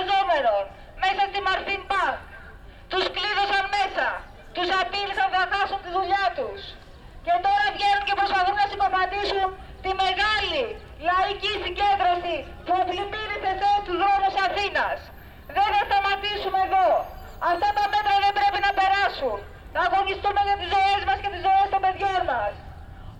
Athens. Call for protest - Platia Syntagmatos. 06.05.2010